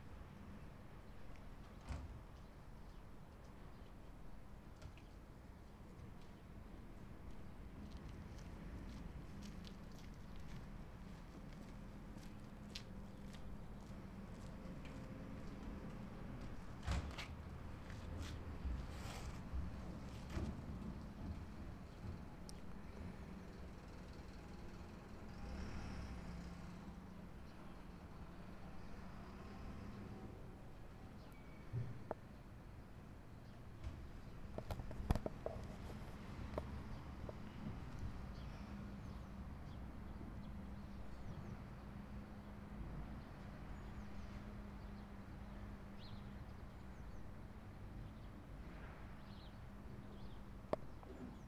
Waldstadt II, Potsdam, Deutschland - Parkplatz
im Hauseingang Saarmunder Str. 60c